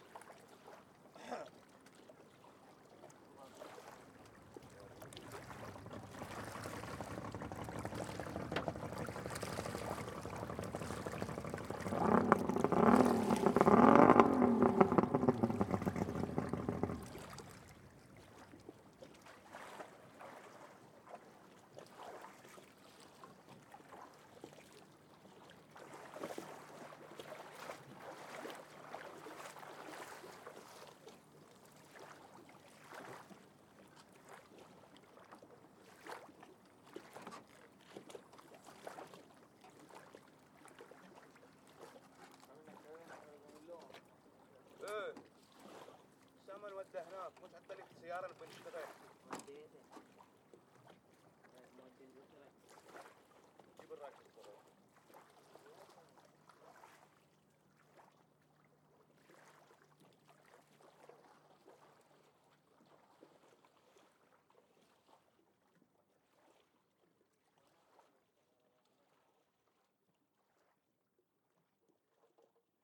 Askar, Bahreïn - Port de pêche - Askar - Bahrain
Askar - Barhain - ambiance du soir - port de pêche
المحافظة الجنوبية, البحرين, May 2021